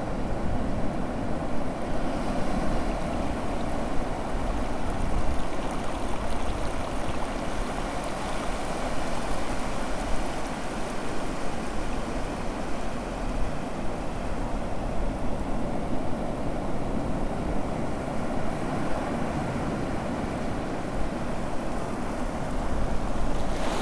Av. Copacabana - Lagoinha, Ubatuba - SP, 11680-000, Brasil - Ondas da Praia da Lagoinha Ubatuba
Gravação das ondas da praia da Lagoinha em Ubatuba, São Paulo. Dia nublado com maré alta.
Record the waves of Lagoinha beach in Ubatuba, São Paulo. Cloudy with high tide.